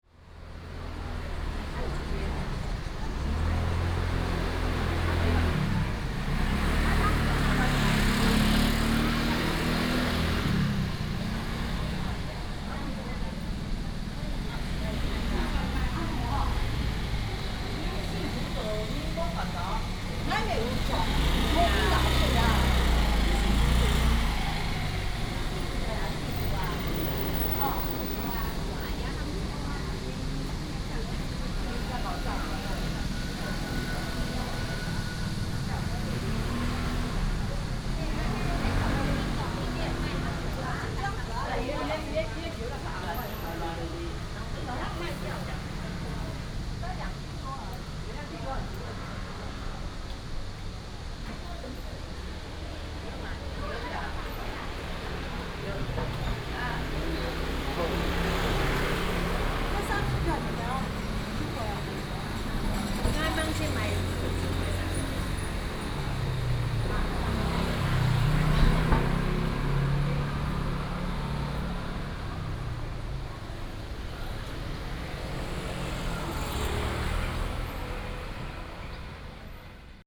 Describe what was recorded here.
In the alley, Vegetable vendors, traffic sound